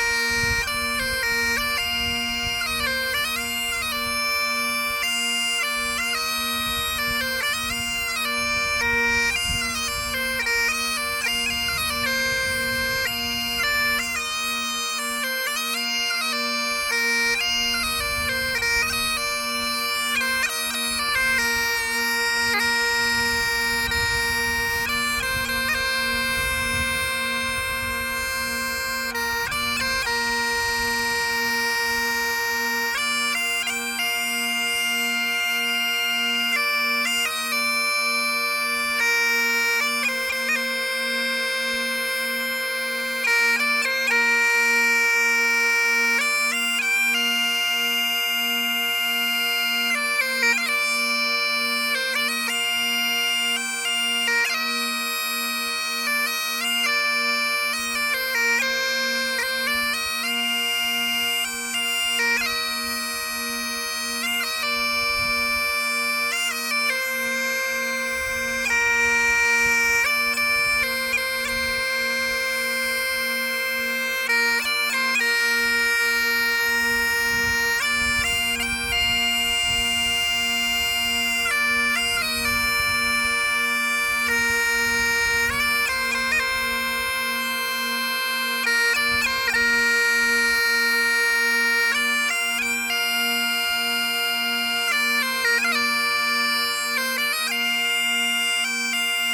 Camaret-sur-Mer, France - Bagpipes on Pen-Hir
Bagpipes player in front of the sea, in front of Pen Hir
Recorded with zoom H6 and wind
Bretagne, France métropolitaine, France